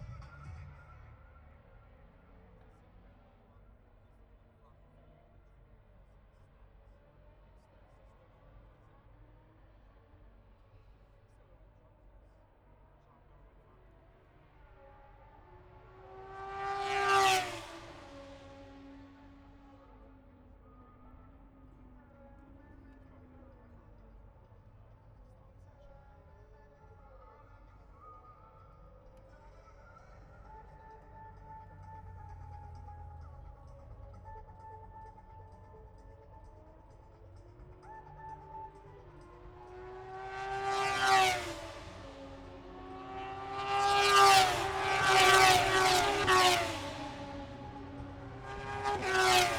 Towcester, UK - british motorcycle grand prix 2022 ... moto two ...
british motorcycle grand prix 2022 ... moto two free practice three ... dpa 4060s on t bar on tripod to zoom f6 ...
6 August, 10:48